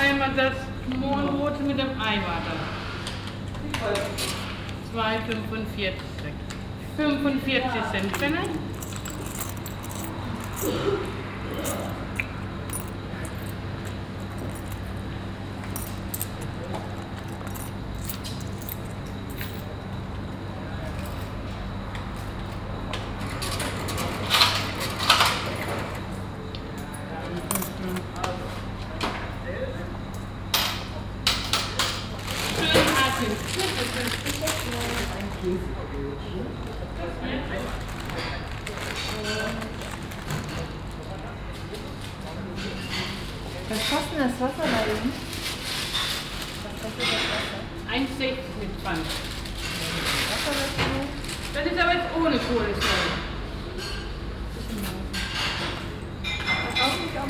Südviertel, Essen, Deutschland - essen, huyssenallee, bakery
In einer Bäckerei. Der Klang der Stimmen von Verkäuferinnen und Käufern, Papiertüten und Geld.
Inside a bakery. The sound of the local voices of the shop assistants and the customer, paper bags and money.
Projekt - Stadtklang//: Hörorte - topographic field recordings and social ambiences
Essen, Germany, June 2014